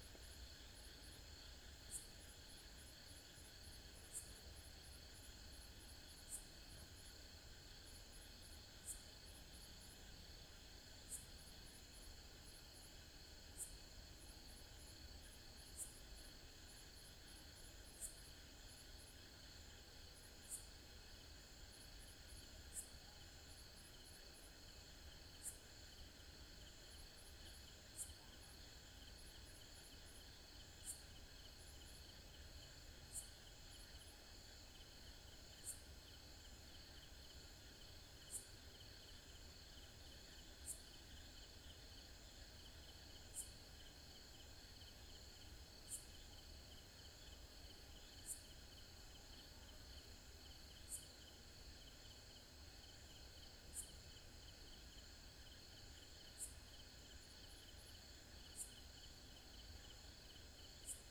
hampi night sounds - night sounds
a bit off the path coming back from a cafe over looking the river at night - feb. 2008
karnatika, india, 19 February 2007